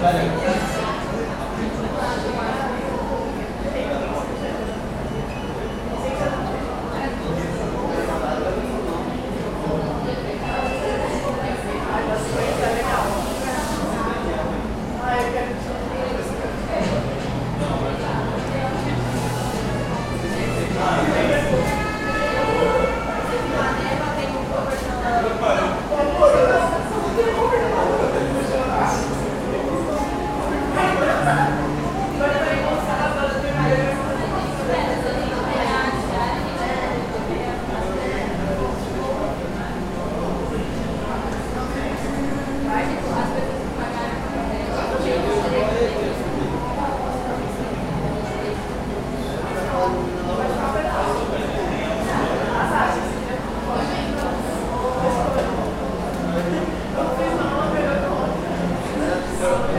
cafeteria starbucks anhembi morumbi mooca